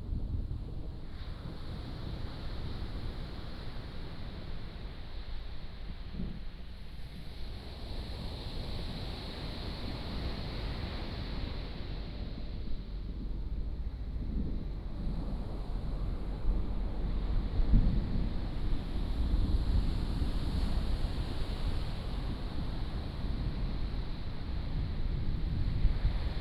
烏石鼻海堤, Changbin Township, Taitung County - Thunder and Waves
Thunder, Waves, Traffic Sound